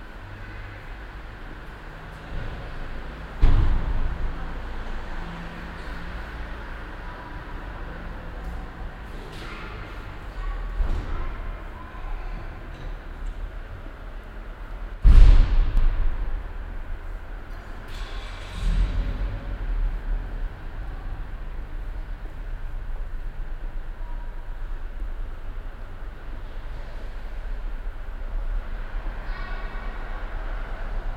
cologne, heumarkt, tiefgarage
tiefgarage mittags, ein paar fahrzeuge, türen schlagen, der kassenautomat
soundmap nrw: social ambiences/ listen to the people - in & outdoor nearfield recordings